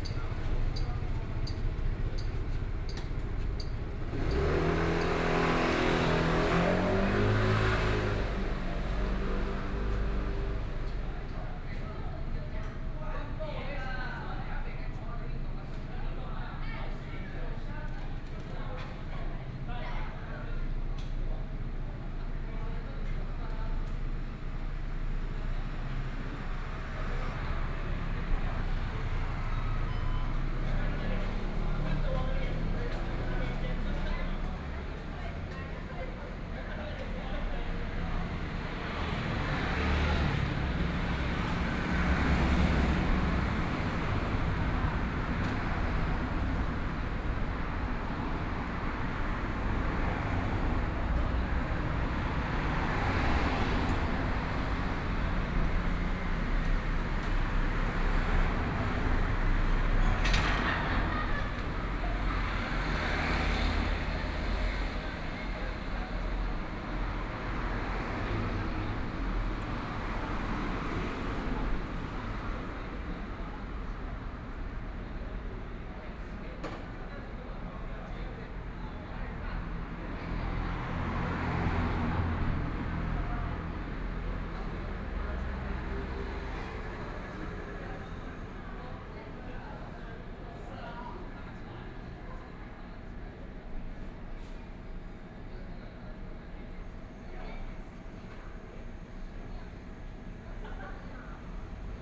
Xinsheng Rd., Taitung City - In front of the convenience store
In front of supermarket convenience, Traffic Sound, Moon Festival
there are many people on the road in the evening, Barbecue
7 September 2014, 21:28